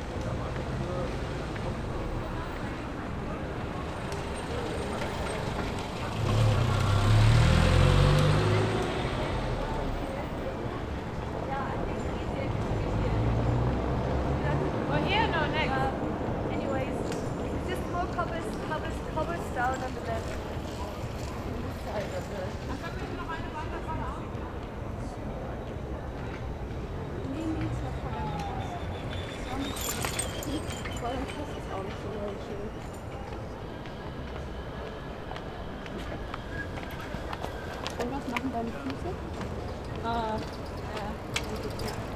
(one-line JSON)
{"title": "Berlin: Vermessungspunkt Maybachufer / Bürknerstraße - Klangvermessung Kreuzkölln ::: 27.06.2010 ::: 01:31", "date": "2010-06-27 01:31:00", "latitude": "52.49", "longitude": "13.43", "altitude": "39", "timezone": "Europe/Berlin"}